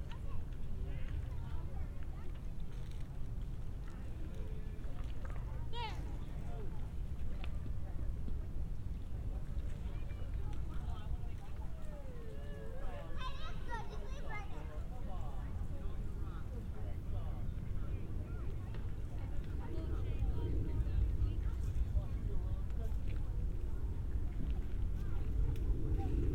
Westside Park, Johnson Rd NW, Atlanta, GA, USA - Greenspace & Playground
Families with children and all the associated sounds, as captured from a park bench. People pass around the bench multiple times, and light wind can be heard. Planes are also present. A low cut was added in post.